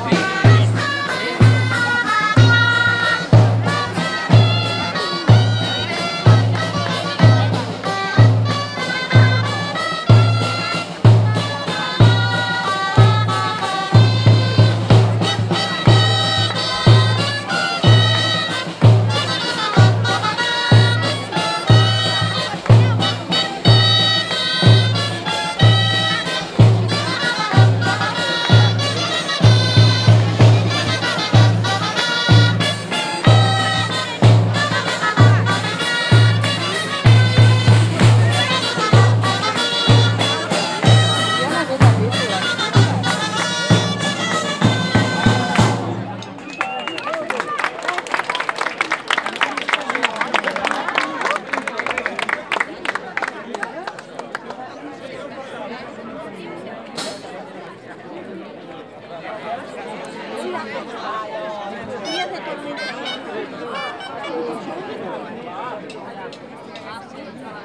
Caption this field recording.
Dia de Festa Major en Sant Bartomeu. Los grallers acompañan a los gigantes desde la parroquia municipal hasta la Plaça del Casal.